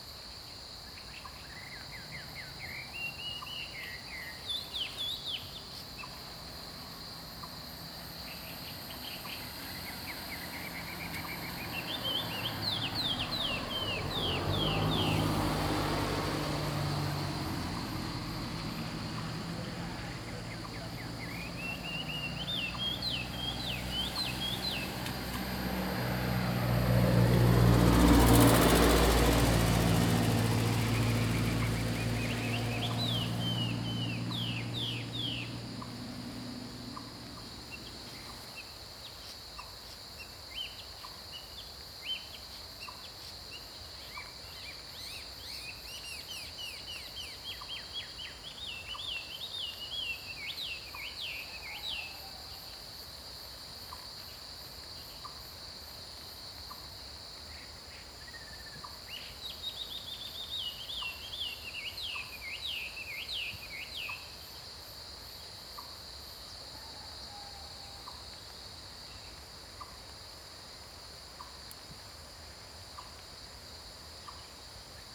Cicada sounds, Bird calls, Crowing sounds, Frog chirping
Zoom H2n MS+XY
26 August 2015, 08:15